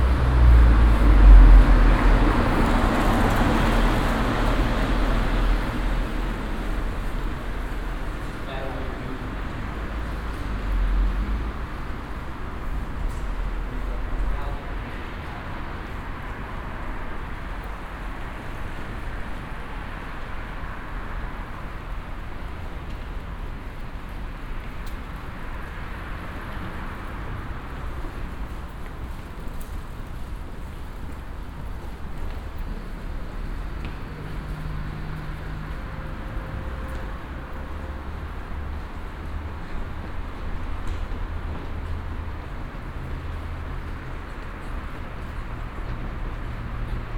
cologne, marzellenstr-eigelstein, unterführung
unterführung nachmittags, vorbeifahrende pkws, fahrardfahrer und fußgänger, zugüberfahrt
soundmap nrw: social ambiences/ listen to the people - in & outdoor nearfield recordings